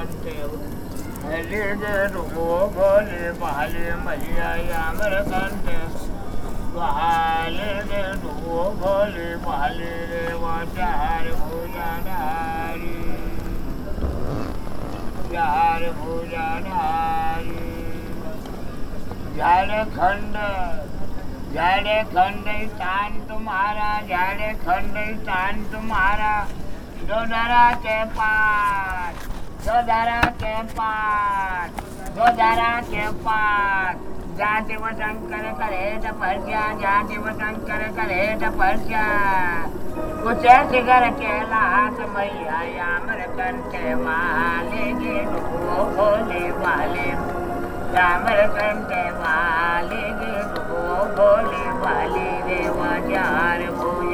Omkareshwar, Madhya Pradesh, Inde - Praise in the dark
Because of a powercut, the city of Omkareswhar is in the dark. A man is praying on the bridge above the Narmada river. Then the power comes back and the music played in the nearby market can be heared.
Madhya Pradesh, India, 16 October 2015